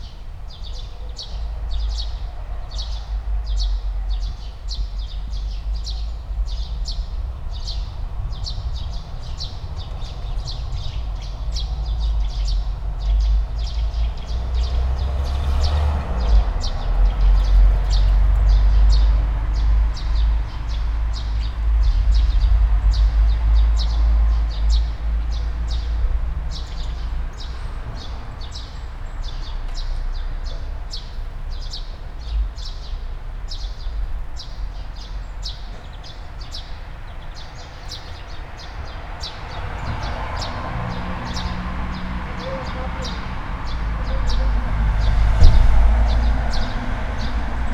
all the mornings of the ... - aug 10 2013 saturday 10:07